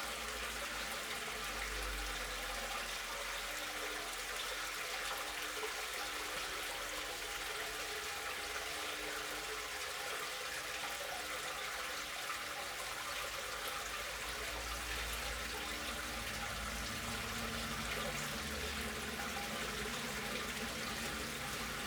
The stream runs out of this pond into an underground pipe that takes it beneath the town to the river Vltava. The water flow resonates in the pipe giving this pitched metallic quality. Individual car can be heard passing by on the road nearby.
Praha, Česko, 6 April